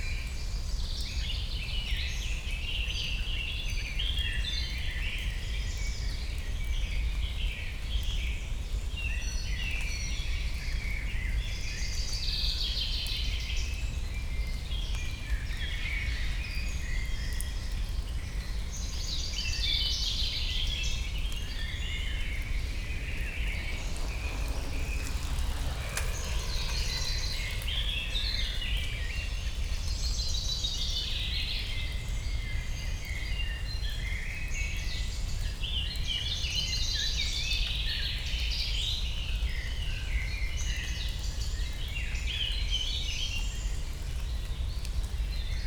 Sielsian Park, Chorzów, Siemianowice - park ambience /w light rain
Śląski Park Kultury, Silesian Park, ambience within park, deep drone from rush hour traffic far away, a few cyclists passing by
(Sony PCM D50, DPA4060)
Chorzów, Poland